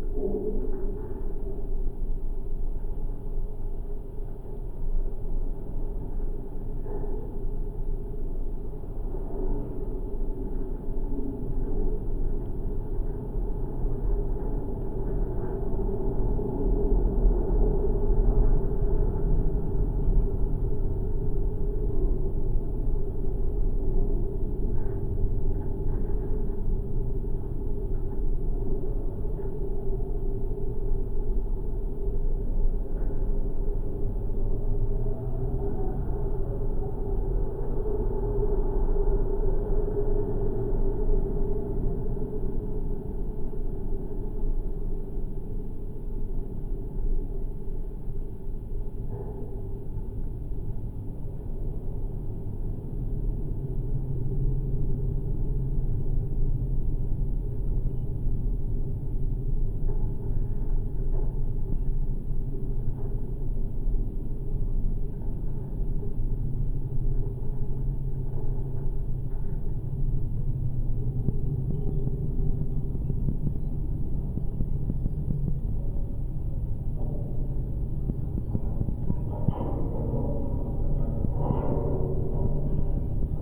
Abandoned metallic watertower. Recorded with geophone
Daugavpils novads, Latgale, Latvija, 31 July 2020